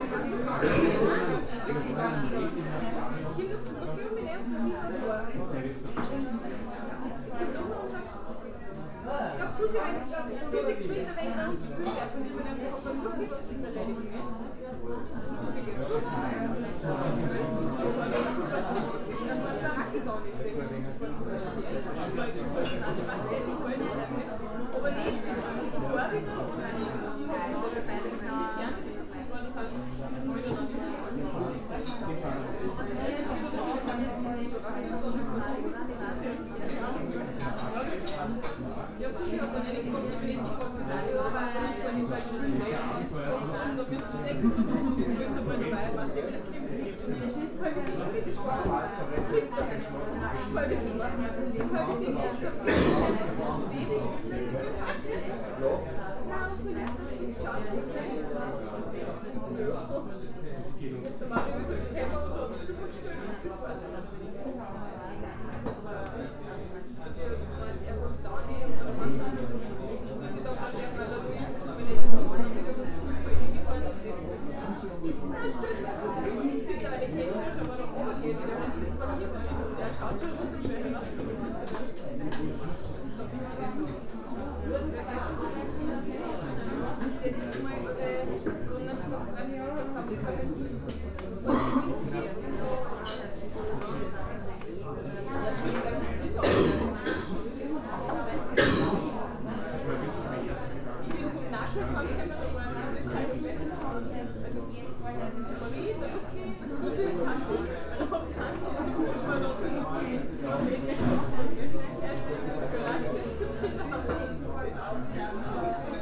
café bar walker, hauptplatz 21, 4020 linz